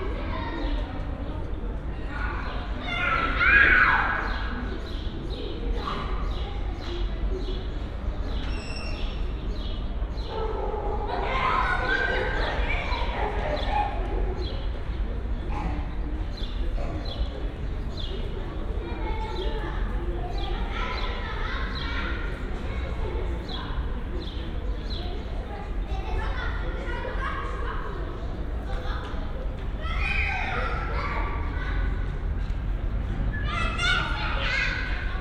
inner yard at Centrum Kreuzberg, Berlin, cold spring evening, ambience
(tech: Olympus LS5 + Primo EM172 set)
Berlin, Germany